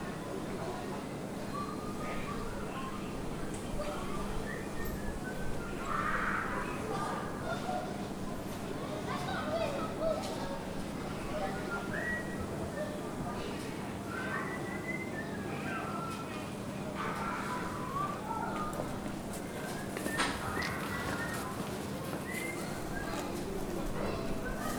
Rue du Four Becard, Saint-Denis, France - Rue du Four Becard
This recording is one of a series of recording, mapping the changing soundscape around St Denis (Recorded with the on-board microphones of a Tascam DR-40).